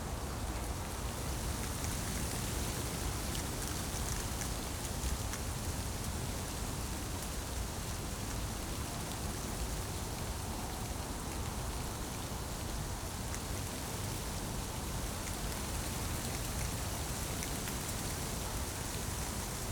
Tempelhofer Feld, Berlin, Deutschland - wind in poplar trees
place revisited, autumn saturday, cold wind
(Sony PCM D50, DPA4060)
Berlin, Germany, 22 November 2014